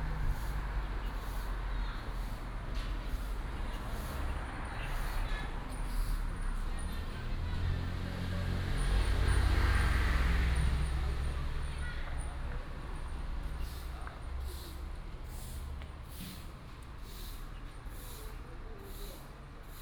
五穀廟, Sanwan Township - In the square of the temple
In the square of the temple, Bird call, Garbage truck arrived, traffic sound, Primary school information broadcast, Binaural recordings, Sony PCM D100+ Soundman OKM II
Miaoli County, Taiwan, 15 September, ~08:00